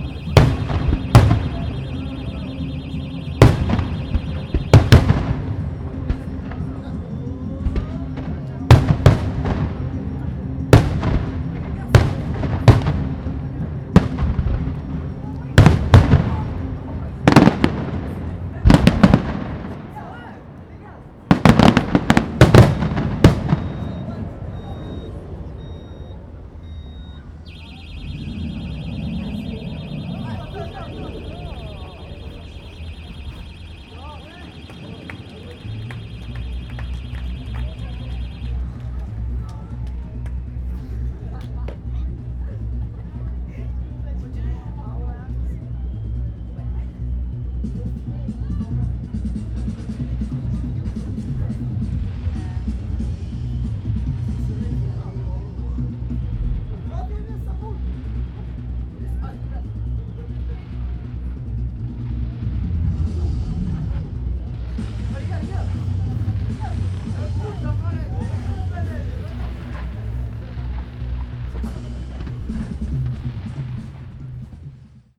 Square Gilbert Savon, Cassis, France - fireworks & car alarm
The time is a guess.
In Cassis there was a fireworks show featuring music, men wearing suits covered in LEDs blowing fire on boats, and also abseiling up the side of the nearby cliff and zip-lining down to the sea. There were also projections onto the side of the cliff face.
In the recording you can hear the fireworks and music most prominently, and towards the end you can hear a car alarm that was triggered by the fireworks, and some voices from the crowd.
Recorded on a ZOOM H1
19 May 2013